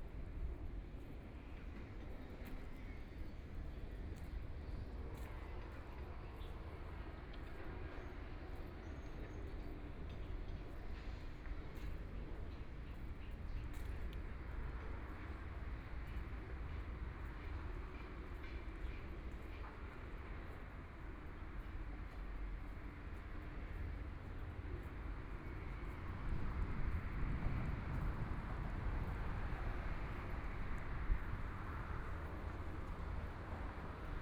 walking in the Street, Suburbs, Traffic Sound, Beat sound construction site, Binaural recording, Zoom H6+ Soundman OKM II